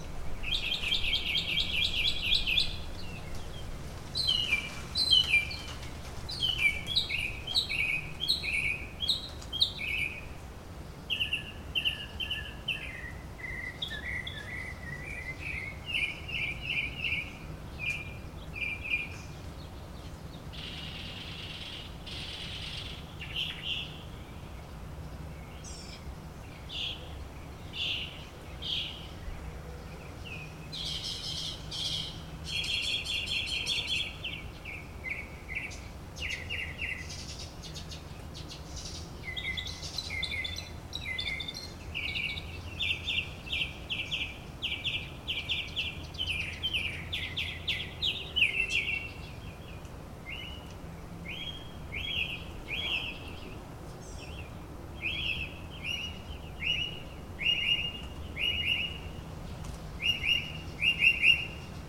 Kendale Lakes, FL, USA - Morining Seranade
Clear bright morning
Residential area
Mockingbirds